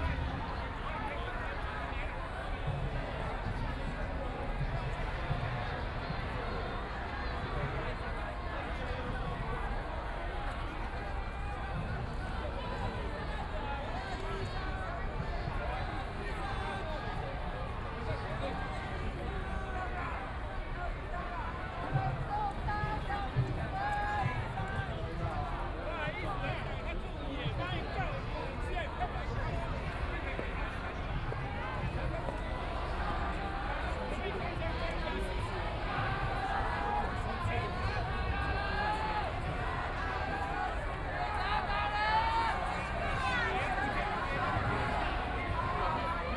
行政院, Taiwan - occupied the Executive Yuan
University students occupied the Executive Yuan
Binaural recordings
Taipei City, Taiwan, 23 March 2014